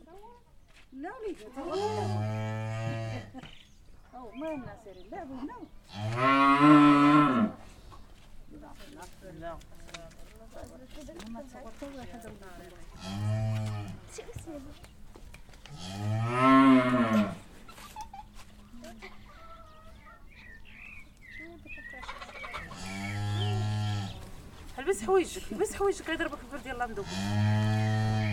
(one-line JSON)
{"title": "Laâssilat, Maroc - Conversations de femmes et bruits de vache", "date": "2021-02-28 15:47:00", "description": "Des femmes discutent dans la cour extérieure d'une maison. Une vache meugle dans une étable près de la maison.\nSon enregistré par Chahine et Loubna.", "latitude": "33.35", "longitude": "-7.73", "altitude": "186", "timezone": "Africa/Casablanca"}